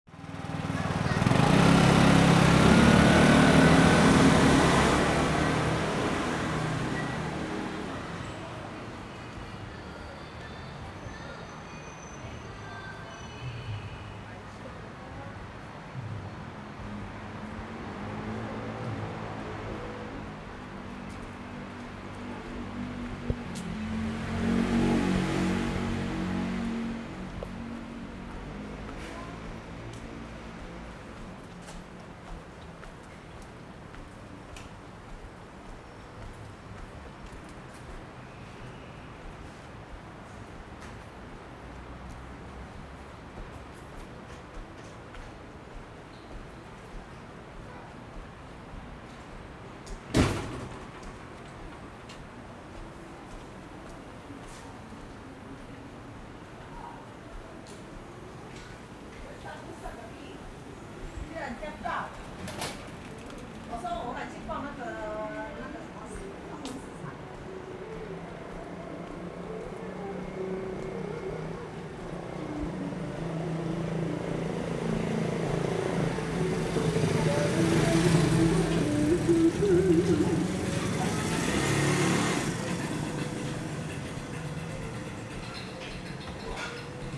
Ln., Jingping Rd., Zhonghe Dist., New Taipei City - Walking in a small alley
Walking in a small alley, Sony ECM-MS907, Sony Hi-MD MZ-RH1